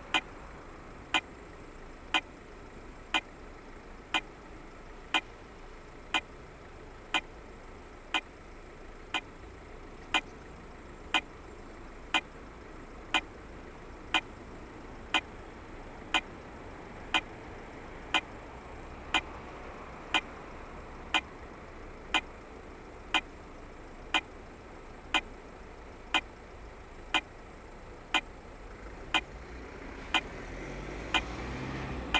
Mechelen, Belgique - Red light
On a quite busy street, red light indicates to pedestrians they can cross. The sound is adaptative to the traffic noise. If there's few cars, the red light produces few sound, and conversely.